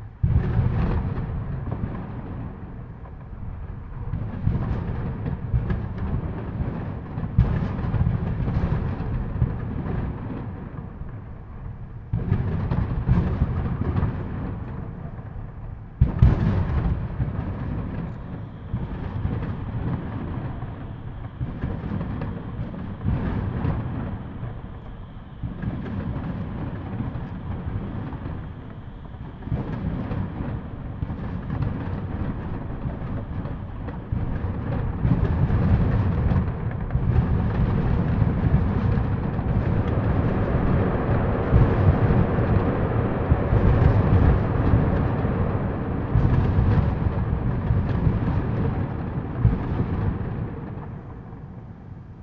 Solec, Warszawa, Poland - sound of fireworks reflecting off the buildings
heard some fireworks then quickly hung my mics out the window.